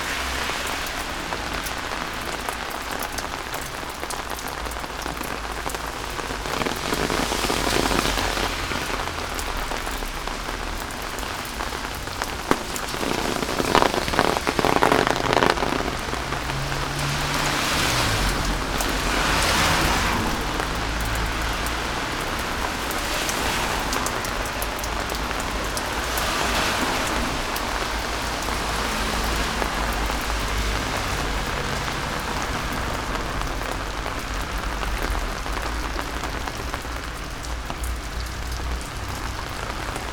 tight emptiness between neighboring houses, Maribor - rain
Maribor, Slovenia, 14 August 2014, 10:36